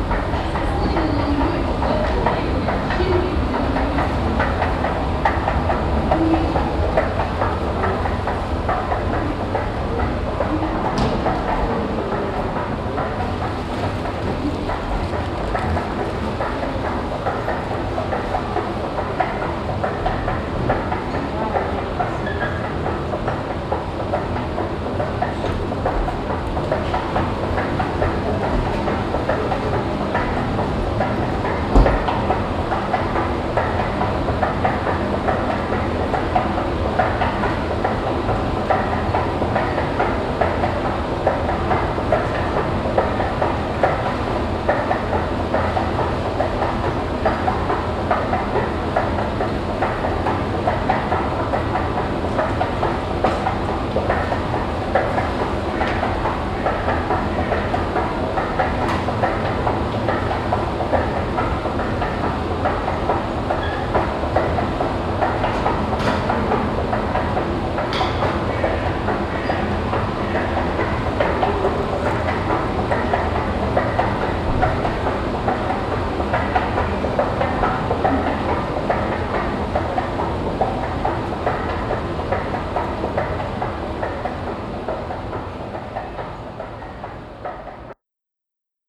Stadtkern, Essen, Deutschland - essen, rathenaustrasse, moving staircase
At the entry of a local bank. the sound of a small moving staircase.
Am Eingang einer lokalen Bank Filiale. der Klang einer kleinen Rolltreppe.
Projekt - Stadtklang//: Hörorte - topographic field recordings and social ambiences
Essen, Germany, April 2014